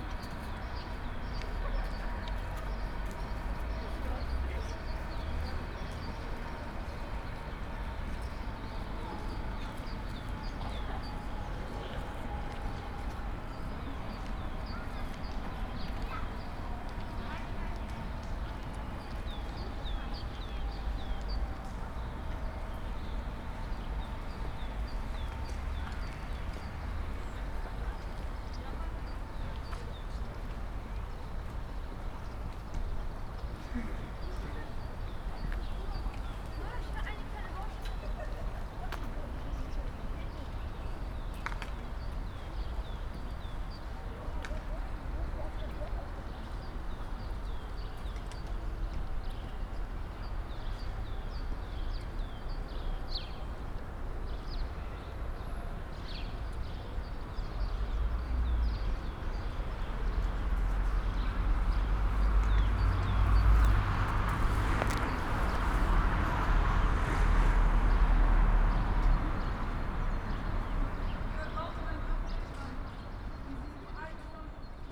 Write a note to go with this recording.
street in front of Hufeland school, only a few pupils around, the sound of a gong, (Sony PCM D50, DPA4060)